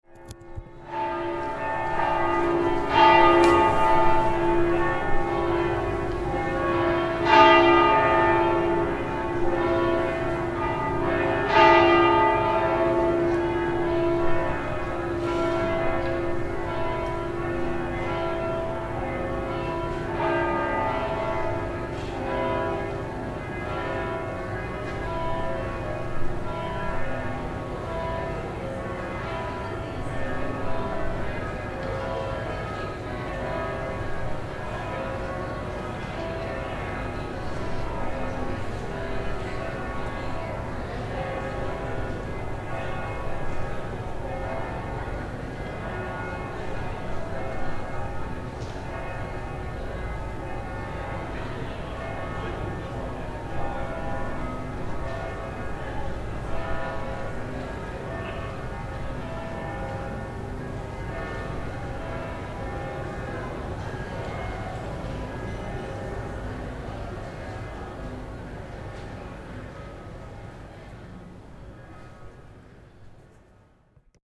Cannaregio, Venise, Italie - Church bells in Venice
Church Bells in Venice, Zoom H6